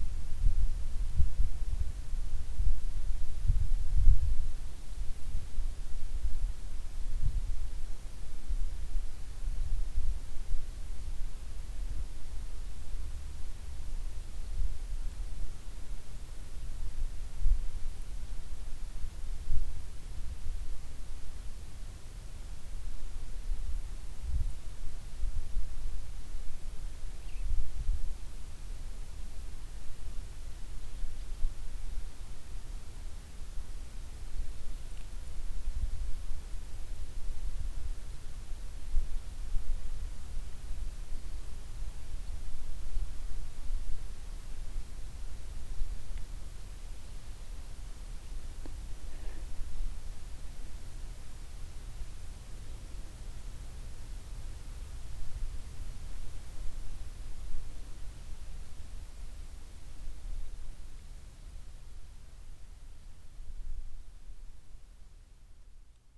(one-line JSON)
{"title": "stodby, inside cornfield", "date": "2010-09-10 12:23:00", "description": "inside a cornfield - the silence of the surrounding - some wind moves in the field, birds passing by// notice: no car motor sounds\ninternational sound scapes - social ambiences and topographic field recordings", "latitude": "54.73", "longitude": "11.15", "altitude": "1", "timezone": "Europe/Copenhagen"}